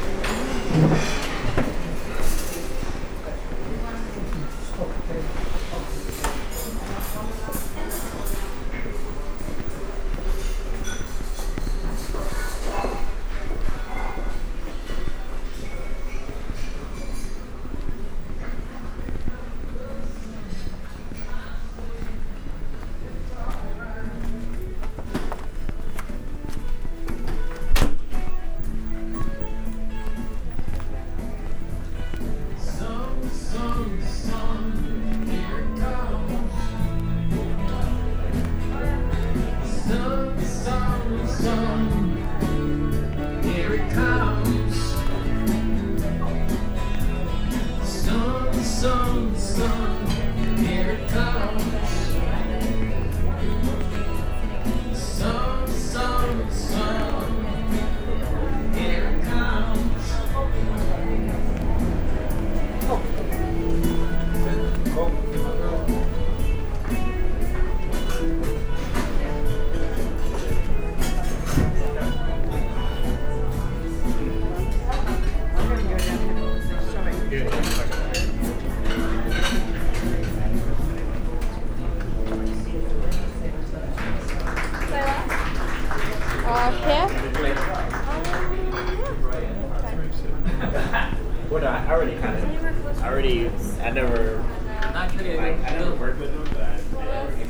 Walkabout on the, North Atlantic Ocean. - Walkabout
Recorded on a trans atlantic crossing Southampton-New York while walking from deck 7, the Kings Court self service dining area along to The Corinthian Room, down to the Main Concourse on deck 3 and finally deck 2 outside the computer area. I found walking without making creaking sounds impossible. The double chimes are the lifts. Heard are voices at a quiz in the Golden Lion Pub and part of a recital in The Royal Court Theatre. The final voices are teenagers outside Connexions, a public meeting area with computers.
MixPre 3 with 2 x Beyer Lavaliers.